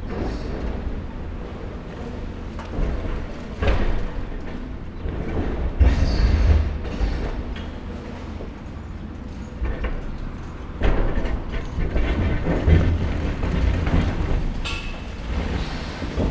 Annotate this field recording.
demolition of a warehouse, excavator with grab breaks up parts of the building, the city, the country & me: march 2, 2016